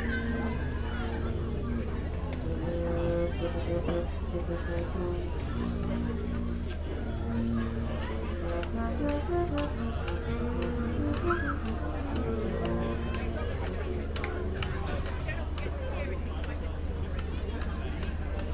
The May Fayre, The Street, South Stoke, UK - The concert band warming up
This is the sound of the concert band warming up their instruments ahead of performing a variety of very jolly numbers to celebrate the 1st of May.
England, UK, 1 May 2017